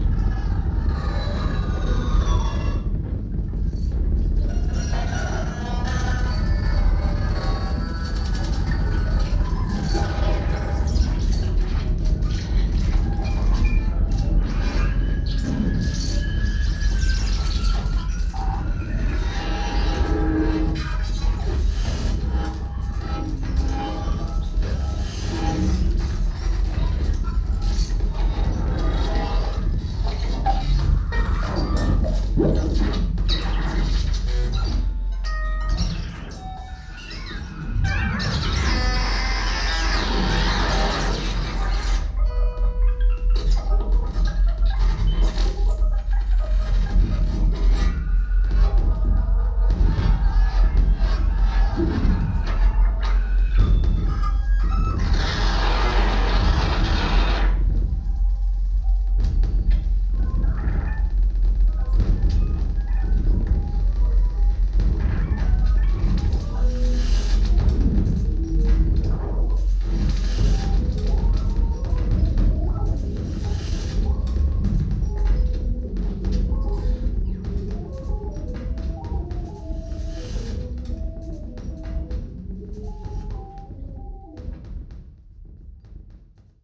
soundmap nrw: social ambiences/ listen to the people - in & outdoor nearfield recordings